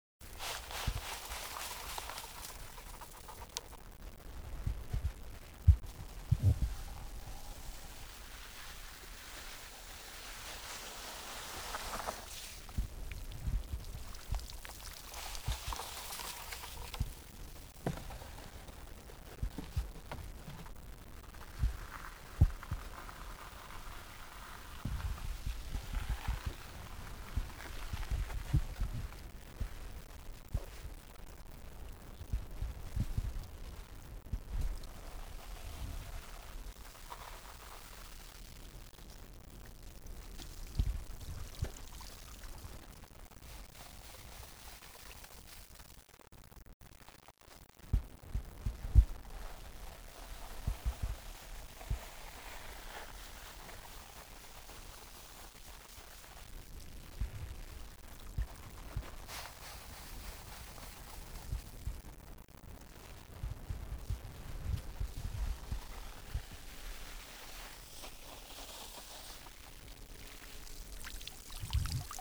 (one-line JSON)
{"title": "ramassage de fleur de sel loi", "description": "enregistré lor du tournage fleur de sel darnaud selignac", "latitude": "46.22", "longitude": "-1.44", "altitude": "1", "timezone": "Europe/Berlin"}